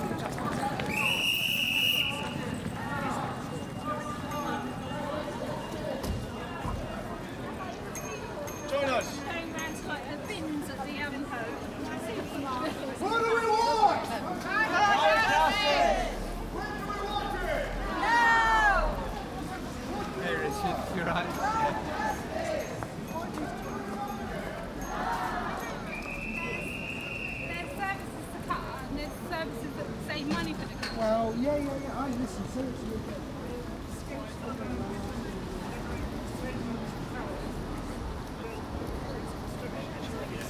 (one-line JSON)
{"title": "Reading, Reading, Reading, UK - Reading People's March for Climate", "date": "2015-11-28 12:20:00", "description": "The chants and conversations of a march through Reading's town centre passes Starbucks on Kings Street. 'Reading People's March for Climate' has been organised to \"encourage leaders at the Paris summit COP21 to reach courageous and binding decisions on Climate Change\". Recorded on the built-in microphones on a Tascam DR-05.", "latitude": "51.46", "longitude": "-0.97", "altitude": "45", "timezone": "Europe/London"}